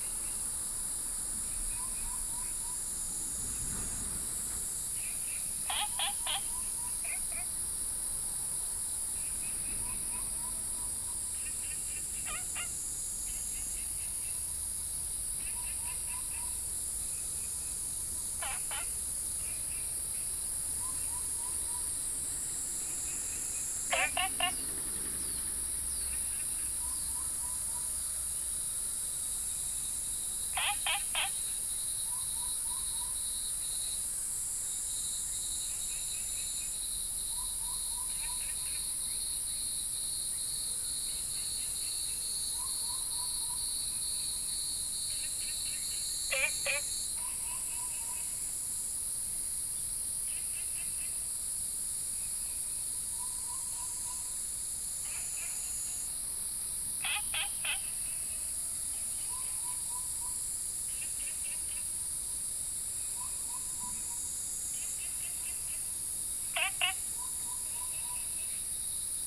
Taomi Ln., Puli Township - In the morning
In the morning, Bird calls, Cicadas cry, Frogs chirping
Puli Township, 桃米巷11-3號, 11 August 2015, ~05:00